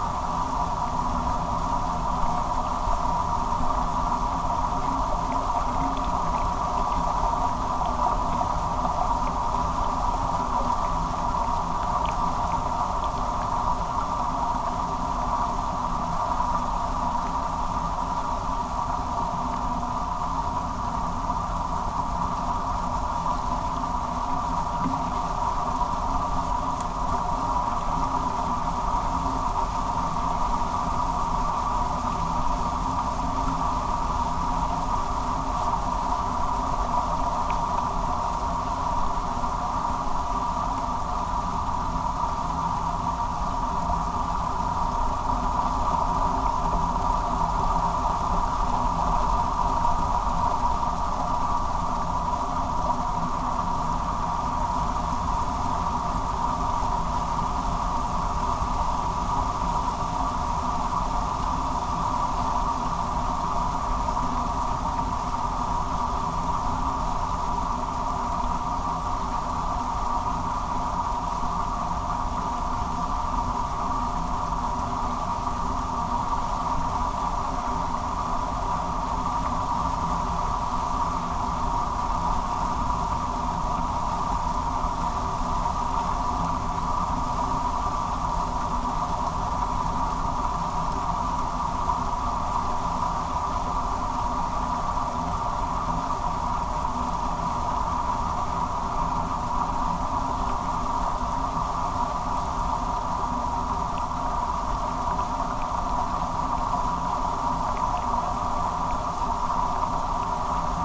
some kind of little dam with water falling down. recorded with small omnis and LOM geophone on the construction of the dam